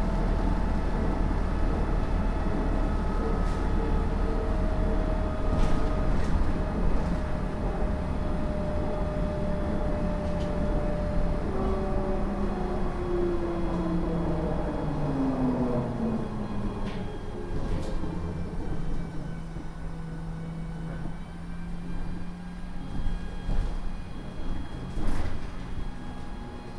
Tallinn, trolleybus stop at Balti jaam
Inside/outside sound - the sound inside of an empty trolleybus driving from Baltijaam (Tallinns main train station) to the next stop.
Tallinn, Estonia, 19 April, 23:50